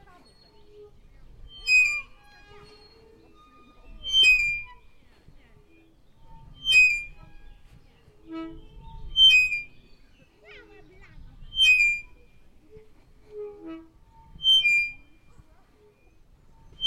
Kraków, Lasek Wolski, playground
merry-go-round
Kraków, Poland, May 2011